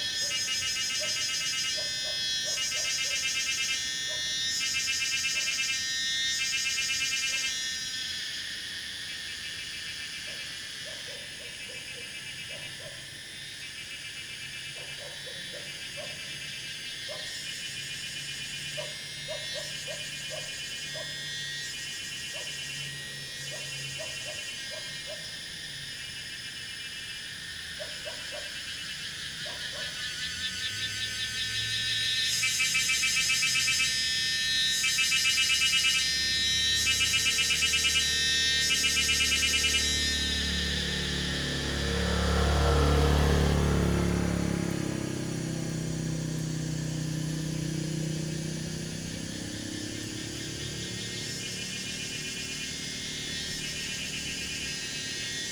水上巷, 埔里鎮桃米里 Taiwan - Cicadas sound
Cicadas sound, Traffic Sound
Zoom H2n MS+XY